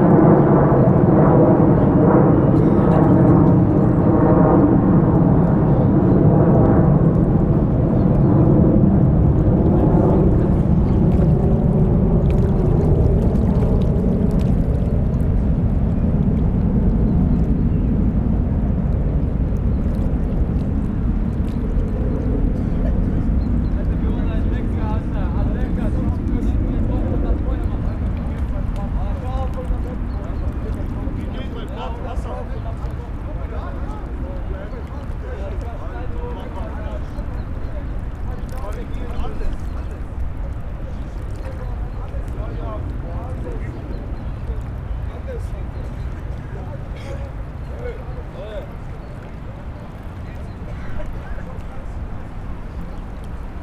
Innsbruck, Waltherpark am Inn Österreich - Frühling am Inn
walther, park, vogel, weide, flugzeug, wellen, wasser, inn, lachen, gespräche, husten, waltherpark, vogelweide, fm vogel, bird lab mapping waltherpark realities experiment III, soundscapes, wiese, parkfeelin, tyrol, austria, anpruggen, st.